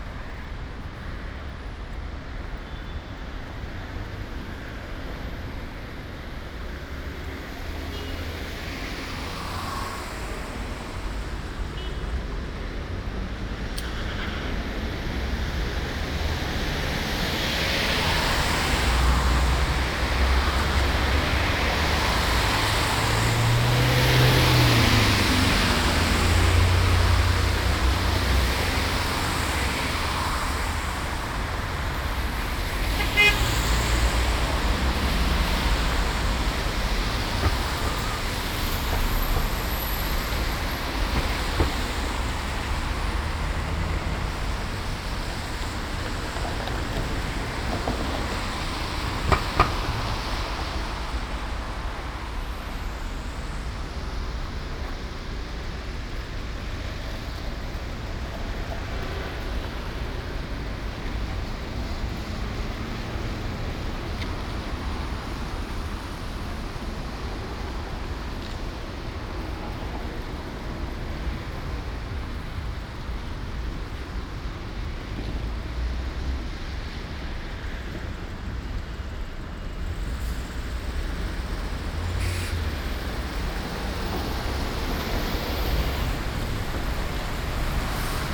Ascolto il tuo cuore, città. I listen to your heart, city. Several chapters **SCROLL DOWN FOR ALL RECORDINGS** - Evening walk with bottles in the garbage bin in the time of COVID19 Soundwalk
"Evening walk with bottles in the garbage bin in the time of COVID19" Soundwalk
Chapter XCVII of Ascolto il tuo cuore, città. I listen to your heart, city
Thursday, June 4th 2020. Short walk in San Salvario district including discard of bottles waste, eighty-six days after (but day thirty-two of Phase II and day nineteen of Phase IIB and day thirteen of Phase IIC) of emergency disposition due to the epidemic of COVID19.
Start at 6:01 p.m. end at 6:24 p.m. duration of recording 22'45''
The entire path is associated with a synchronized GPS track recorded in the (kml, gpx, kmz) files downloadable here: